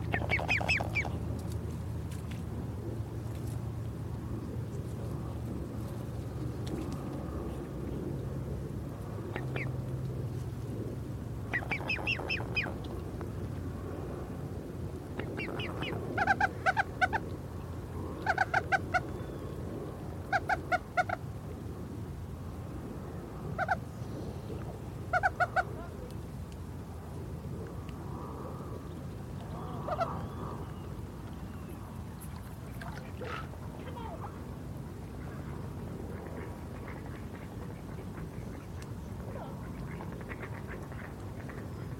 bird reserve at Lodmoor, Weymouth
Lodmoor bird reserve - with some boy racers at the beginning.